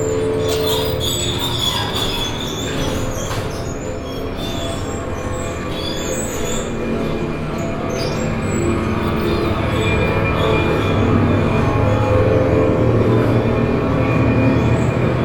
völklingen, völklinger hütte, möllerhalle
möllerhalle des weltkulturerbes, jetzt austellungshalle mit kino und installationen. hier mit klängen des kinos und video installation
soundmap d: social ambiences/ listen to the people - in & outdoor nearfield recordings